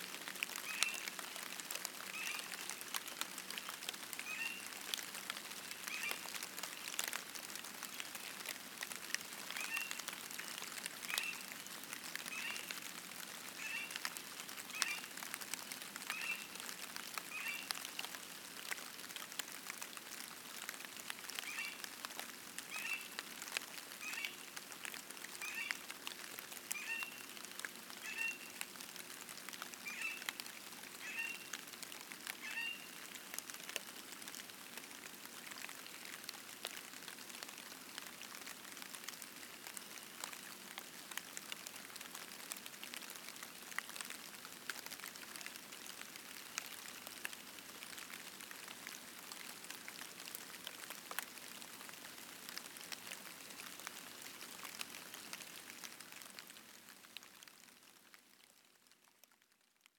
Lunsford Corner, pond, Arkansas, USA - Spring Peepers (frogs) at Lunsford Pond
Recorded at 2 am at Lunsford Corner pond, near Lake Maumelle, central Arkansas, USA. Rain falling. The pond is about 10 feet from the microphones, which were mounted on a tree and left to record overnight. Excerpt from 14 hour recording. Microphones: Lom MikroUsi pair. Recorder: Sony A10.
Arkansas, United States of America, 23 February 2020